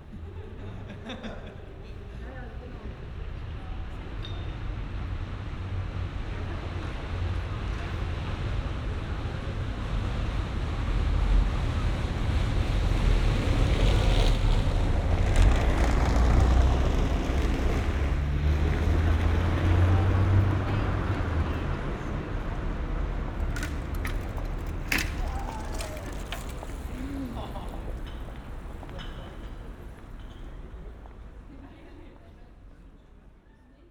Berlin: Vermessungspunkt Friedel- / Pflügerstraße - Klangvermessung Kreuzkölln ::: 22.06.2012 ::: 00:27
22 June 2012, 00:27